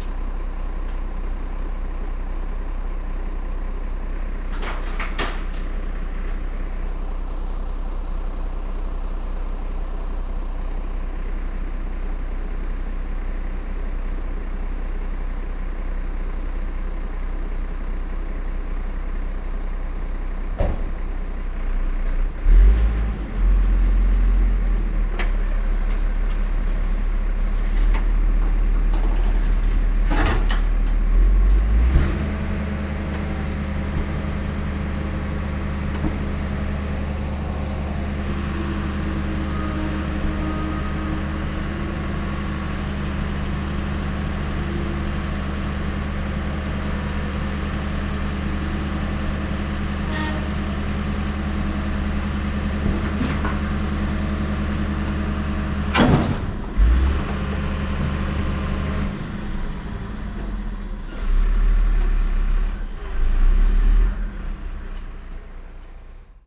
cologne, mainzerstr, containerabholung
soundmap: köln/ nrw
einhängen und aufladen eines schuttcontainers, abfahrt des fahrzeuges nachmittags
project: social ambiences/ listen to the people - in & outdoor nearfield recordings
8 June 2008